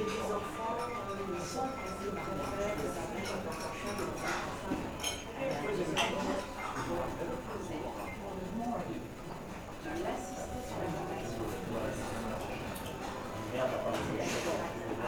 FR: Ambiance dans un petit restaurant.
EN: Restaurant ambience.
Recorder : Tascam DR07 internal mics
France métropolitaine, France, February 2022